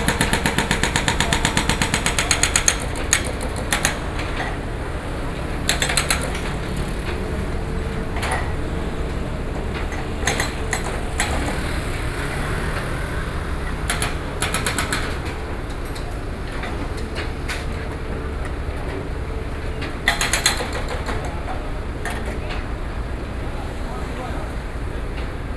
No., Liáoníng St, Taipei, Taiwan - construction
Taipei City, Taiwan, November 2012